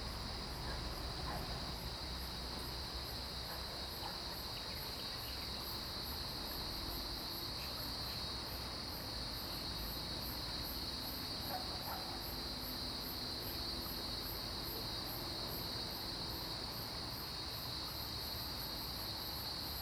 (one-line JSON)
{"title": "青蛙阿婆的家, 埔里鎮桃米里 - In the woods", "date": "2015-09-04 06:15:00", "description": "Cicada sounds, Insect sounds, Birds singing, Dogs barking\nZoom H2n MS+XY", "latitude": "23.94", "longitude": "120.94", "altitude": "475", "timezone": "Asia/Taipei"}